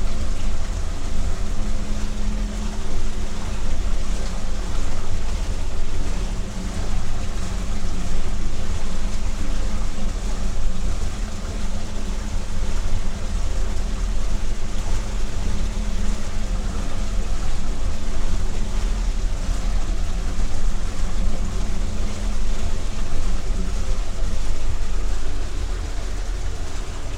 microphones placed down into the small dam